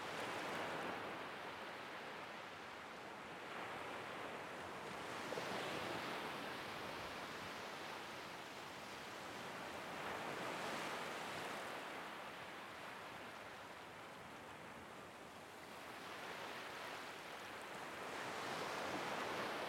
Pass. du Tertre Mignon, Dinard, France - vagues proches et mer calme
ambiance de mer avec les vagues légères prises très proches sur la plage calme.